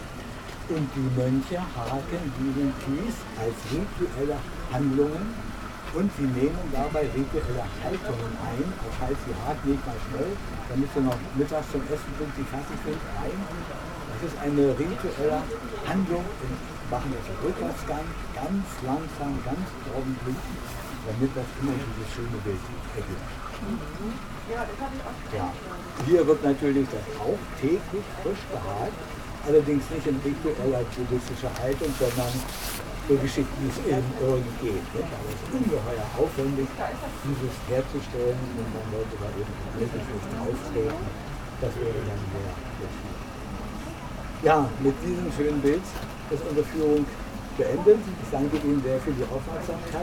Berlin, Gardens of the World, Japanese Garden - fish turns into a dragon
a tourguide explains that a Japanese garden should be looked as a 3D landscape image and that it normally conveys a legend. He also mentions the meaning of particular elements - depending on the lay out and direction of the grooves raked in the fine stones on the ground some elements represent a living creature and other inanimate objects.